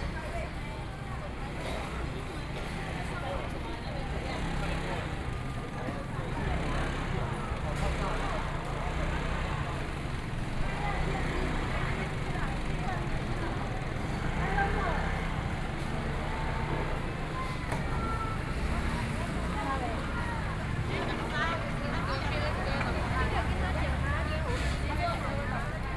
Yongyi St., Xiaogang Dist. - Walking in traditional markets
Walking in traditional markets, Traffic Sound